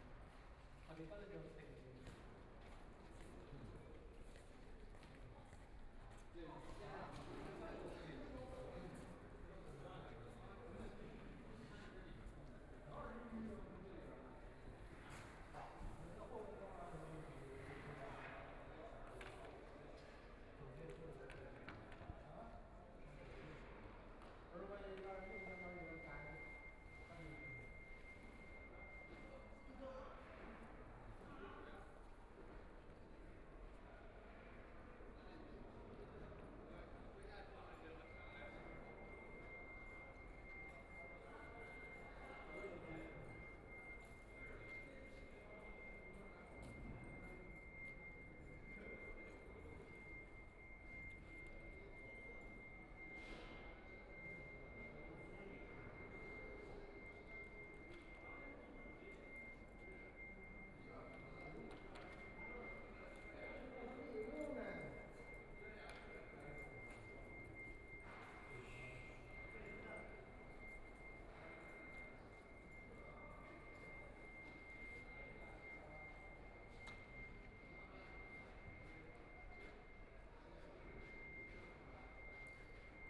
Huangpu District, Shanghai - Construction
Construction workers are arranged exhibition, Standing on the third floor hall museum, The museum exhibition is arranged, Binaural recording, Zoom H6+ Soundman OKM II
Shanghai, China, 28 November 2013